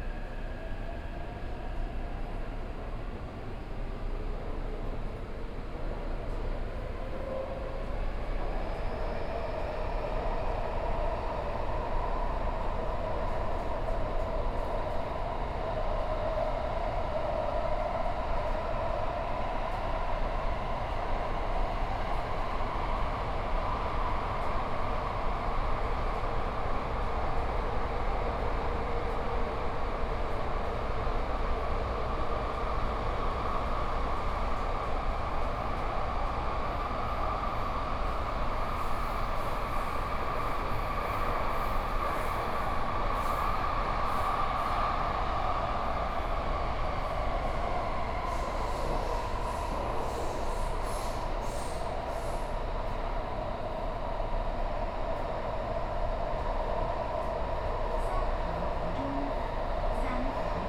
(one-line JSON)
{"title": "Sanchong District, New Taipei City - Luzhou Line", "date": "2013-12-25 13:21:00", "description": "from Daqiaotou Station to Luzhou Station, Binaural recordings, Zoom H6+ Soundman OKM II", "latitude": "25.08", "longitude": "121.49", "altitude": "12", "timezone": "Asia/Taipei"}